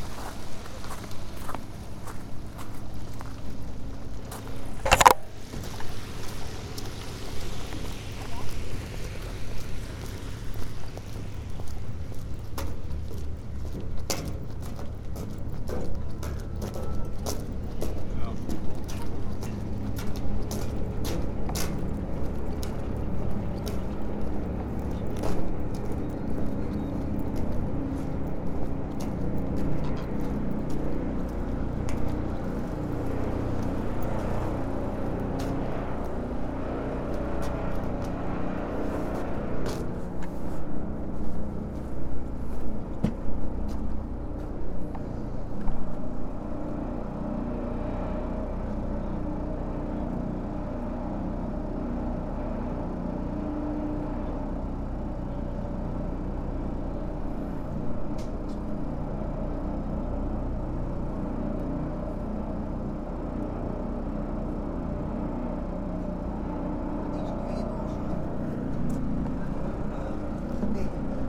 Dresden Fährstelle Kleinzschachwitz, Dresden, Deutschland - Car and passenger ferry Pillnitz
Car and passenger ferry Pillnitz
with Olympus L11 recorded
Sachsen, Deutschland, 7 August